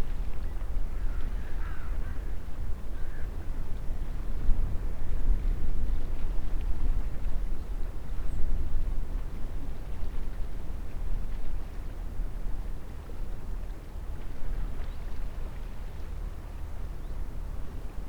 thiessow: aussichtspunkt kleiner königstuhl - the city, the country & me: lookout point
birds and waves
the city, the country & me: march 6, 2013